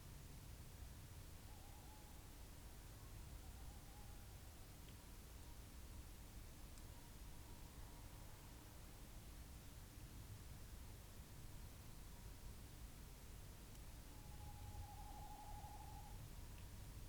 Three owl calls ... tawny ... little ... barn ... open lavalier mics clipped to hedgerow ... bird calls from ... curlew ... pheasant ... skylark ... redwing ... blackbird ... some background noise ... tawny calling first ... later has an altercation with little owl ... barn owl right at the end of track ... lots of space between the sounds ...
Malton, UK, 1 April 2017, 05:20